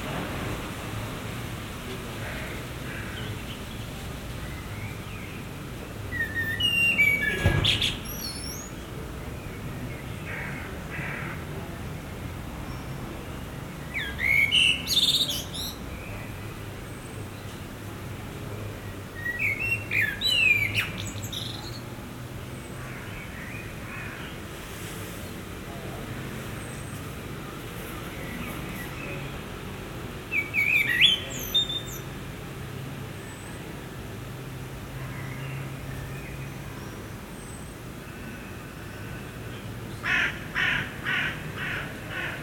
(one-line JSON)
{"title": "La Fonderie, Molenbeek-Saint-Jean, Belgique - Blackbird at night", "date": "2022-06-02 21:52:00", "description": "Tech Note : Ambeo Smart Headset binaural → iPhone, listen with headphones.", "latitude": "50.85", "longitude": "4.34", "altitude": "22", "timezone": "Europe/Brussels"}